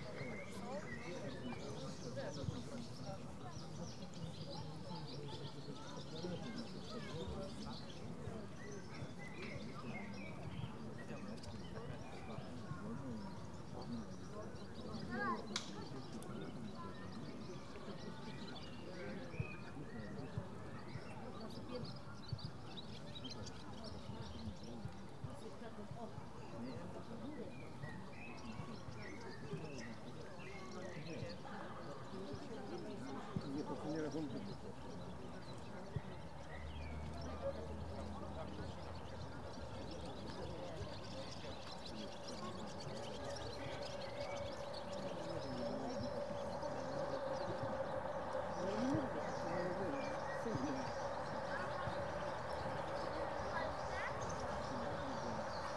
Strzeszyn, Poznan outskirts, lake pier - sunday sunbathers
a bunch of people relaxing on the pier. bikes ticking. train passing in the distance - its vast swoosh is very characteristic for that place. swallows chasing each other under the pier.
województwo wielkopolskie, Polska, European Union, 4 May 2013, 12:45